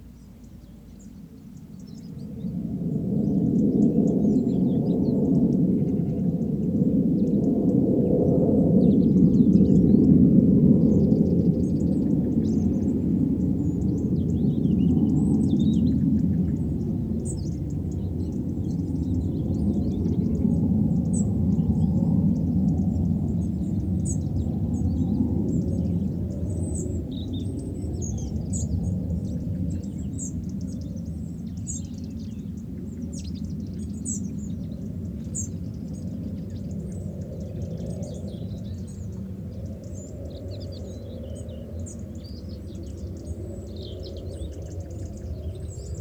{"title": "Parco Naturale Regionale Litorale di Punta Pizzo e Isola di Sant'Andrea, Italie - 30 minutes of sound pollution", "date": "2014-10-29 16:30:00", "description": "Acoustic Ecology:\n30 minutes of sound pollution (raw field recording)@ Parco Naturale Regionale Litorale di Punta Pizzo e Isola di Sant'Andrea, Italie\nZoom H4n (sorry !..)\n+ DPA 4060", "latitude": "39.99", "longitude": "18.01", "altitude": "6", "timezone": "Europe/Rome"}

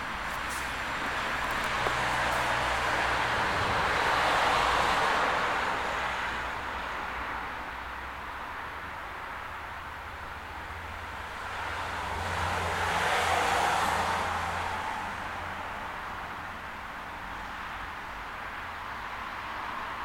{"title": "Gare de Précy-sur-Oise, Précy-sur-Oise, France - Entrée du train en gare de Précy", "date": "2022-01-07 18:02:00", "description": "Arrival and departure of the train from Creil to Pontoise, with car traffic on the adjacent D92 road.\n(Zoom H5 + MSH-6)", "latitude": "49.20", "longitude": "2.38", "altitude": "29", "timezone": "Europe/Paris"}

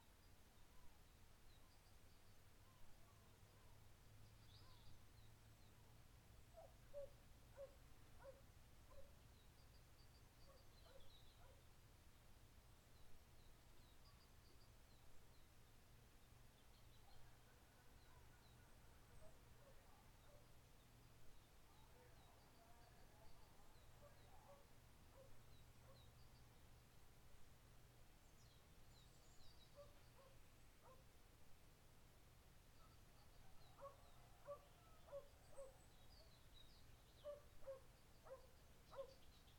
{"title": "kaisers Throne, Pelekas, Corfu, Greece - Kaisers Throne soundscape", "date": "2019-03-05 11:00:00", "description": "A sunny day at Kaiser 's Throne\nZOOM: H4N", "latitude": "39.59", "longitude": "19.82", "altitude": "265", "timezone": "Europe/Athens"}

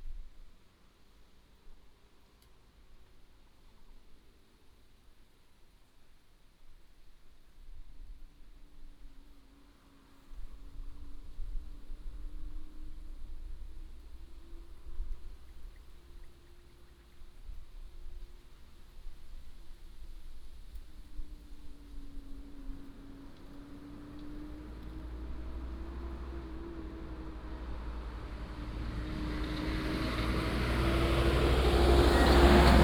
{"title": "200縣道25.5K, Manzhou Township - Squirrel call", "date": "2018-04-02 14:49:00", "description": "Squirrel call, traffic sound", "latitude": "22.11", "longitude": "120.85", "altitude": "160", "timezone": "Asia/Taipei"}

